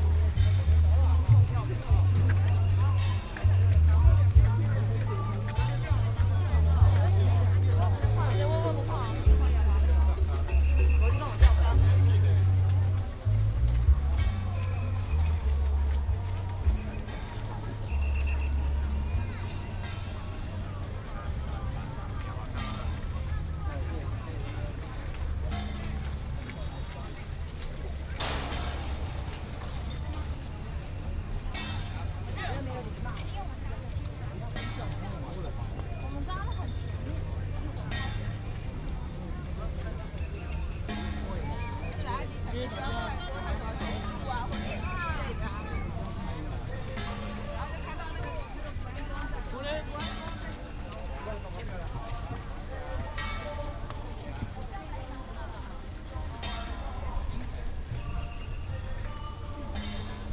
Shatian Rd., Shalu Dist. - Matsu Pilgrimage Procession
Matsu Pilgrimage Procession, A lot of people, Directing traffic, Whistle sound, Footsteps